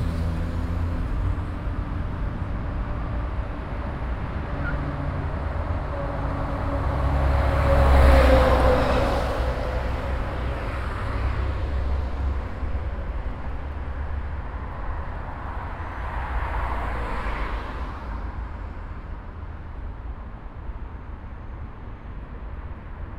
essen, berne street, traffic
Another recording of the traffic at Berbe Street. Here traffic coming out if the tunnel meeting traffic coming from a second lane with interesting texture on the street surface.
Projekt - Klangpromenade Essen - topographic field recordings and social ambiences
Germany, 8 June, 21:58